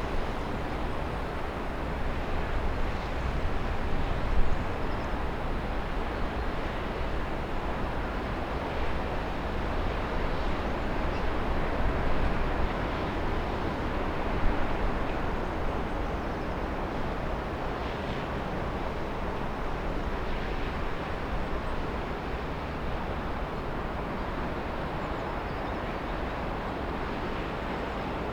Olsztyn, Polska - West train station (3)
Beyond station border. Closer to old train bridge. City atmosphere in rush hour. Microphone headed to old city.